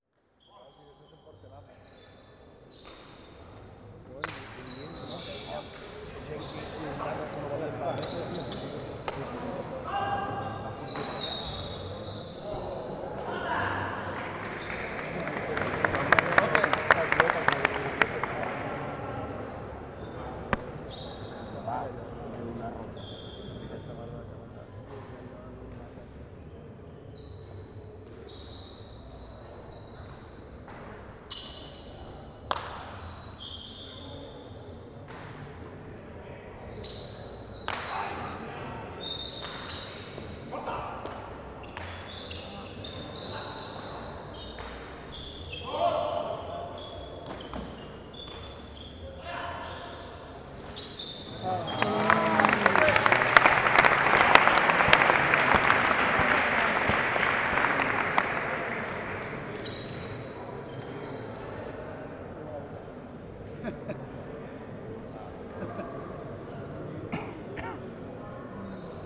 Camí de Borriol a la Costa, s/n, Castellón, Espanya - Partida de pilota del Torneig de Magdalena 2018 al trinquet de Castelló
Enregistrament binaural de la partida d'escala i corda del Trofeu Magdalena de pilota, celebrat al Trinquet Municipal de Castelló de la Plana. La parella formada per Soro III i Héctor van derrotar a Genovés II i Javi.